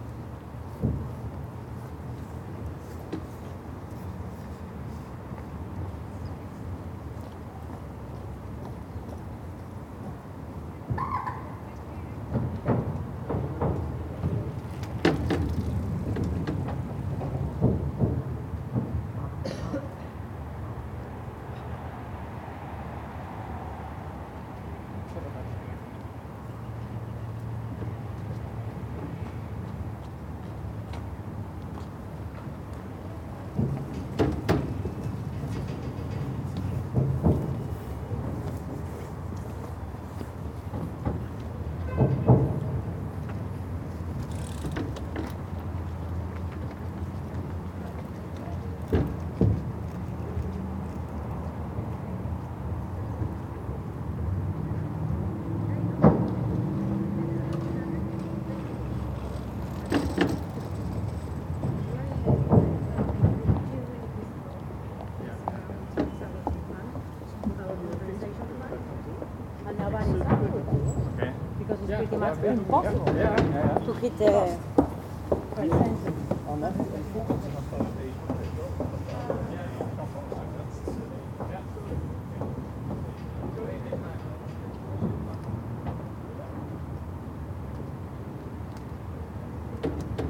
The pedestrian bridge beside the River Kennet, Reading, UK - bikes and walkers sounding the bridge
There is a wooden bridge crossing a small tributary that feeds into the River Kennet. The bridge and the path beside the water are pedestrianised but as this area's not far from the IDR, you can hear the rumble of traffic from the ring road. However, you can also hear the waterfowl on the river, and the different bicycles, shoes and voices of the folk who use the bridge. Recorded of an evening when it was quite sunny and convivial in town, using the onboard mics on Edirol R-09. I love the variety of different bicycle sounds and shoes, and the way the bridge booms whenever anyone rides over it and the mix of accents and voices in our town.